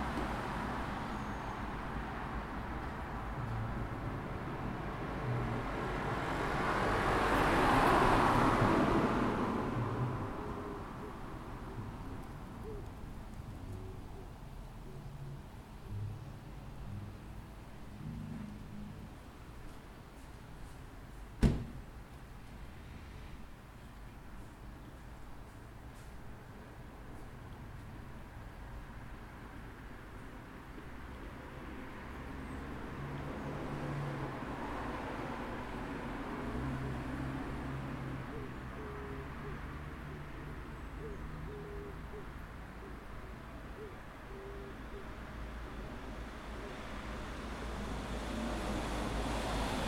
Rednal, Birmingham, UK - Lickey Hills (outside)
Recorded at a bus stop not far from Lickey Hills Country Park with a Zoom H4N.